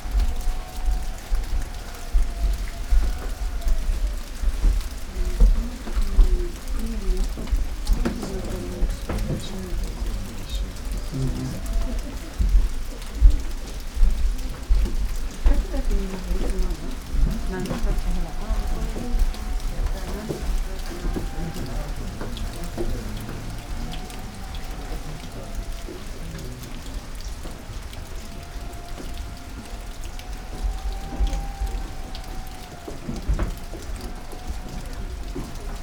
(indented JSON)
{
  "title": "graveled ocean, veranda, Nanzenji, Kyoto - rain, steps",
  "date": "2014-11-02 11:21:00",
  "latitude": "35.01",
  "longitude": "135.79",
  "altitude": "74",
  "timezone": "Asia/Tokyo"
}